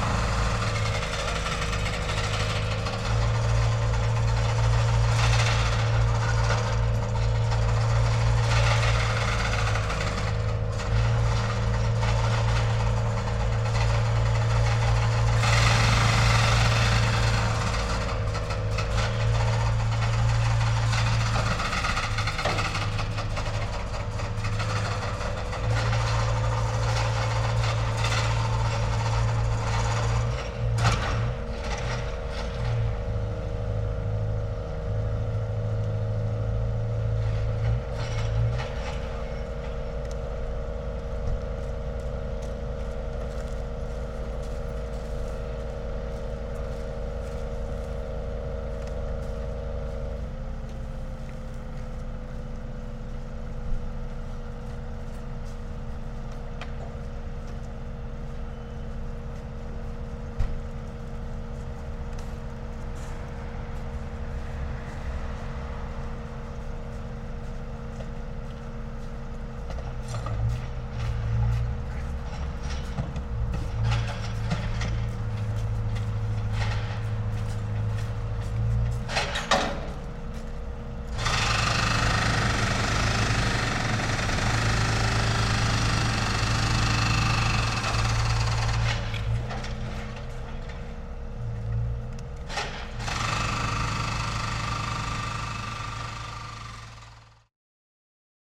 Some tractor demolishing old building